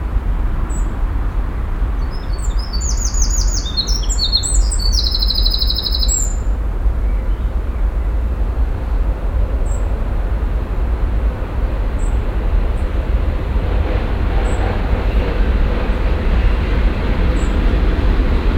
stereofeldaufnahmen im juni 2008 mittags
vogel im gebüsch, parkatmo, fahrradfahrer, passierender zug und strassenverkehr venloerstr.
project: klang raum garten/ sound in public spaces - in & outdoor nearfield recordings